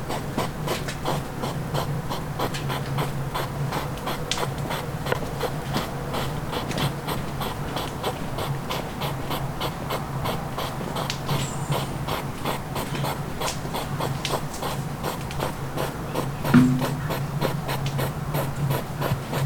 World Listening Day, Bonaforth, Hedgehogs love, fire
18 July 2010, ~19:00